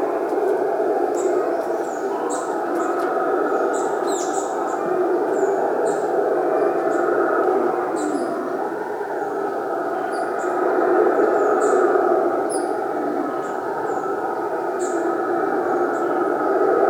Estrada do Chapadão, Canela - RS, 95680-000, Brasil - Monkeys and birds in the Chapadão, Canela
Recorded on the Chapadão road, rural area of Canela, Rio Grande do Sul, Brazil, with Sony PCM-M10 recorder. The predominant sound is of monkeys known as bugios. Also heard are birds, dogs and chainsaws. #WLD2019
Região Sul, Brasil